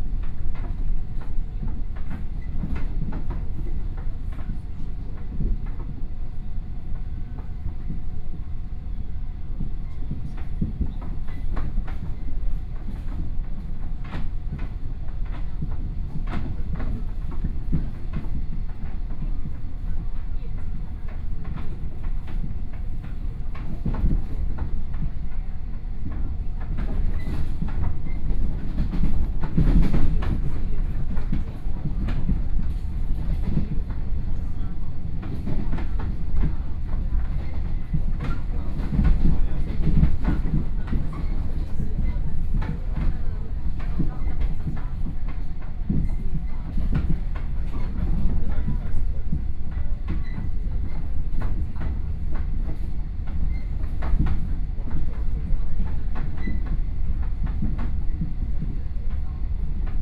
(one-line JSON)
{"title": "Changhua, Taiwan - Local Train", "date": "2013-10-08 11:59:00", "description": "from Chenggong Station to Changhua Station, Zoom H4n+ Soundman OKM II", "latitude": "24.10", "longitude": "120.58", "altitude": "29", "timezone": "Asia/Taipei"}